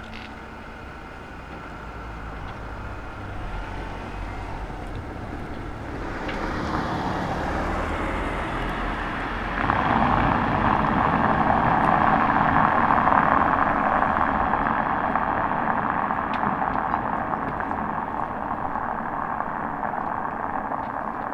Berlin: Vermessungspunkt Maybachufer / Bürknerstraße - Klangvermessung Kreuzkölln ::: 22.02.2013 ::: 02:47